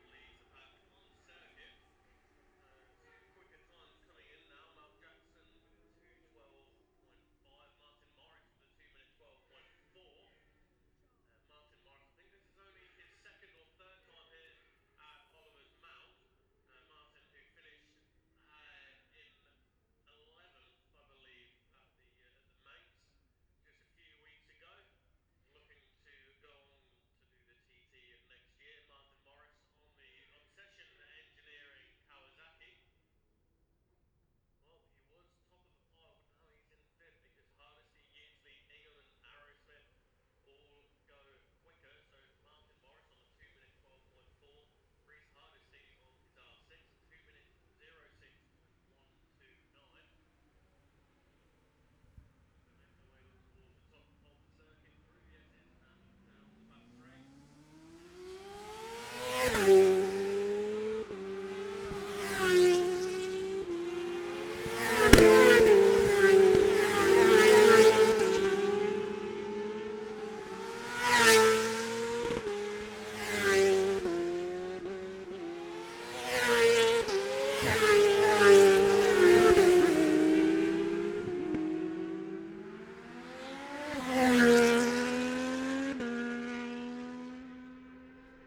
the steve henshaw gold cup 2022 ... 600 group one practice ... dpa 4060s clipped to bag to zoom h5 ... red-flagged then immediate start ...